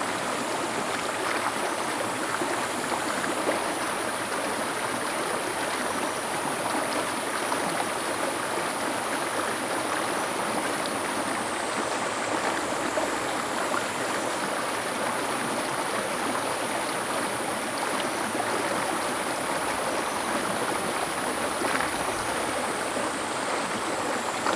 Zhonggua River, Puli Township - The sound of the river
The sound of the river
Zoom H2n MS+XY +Spatial audio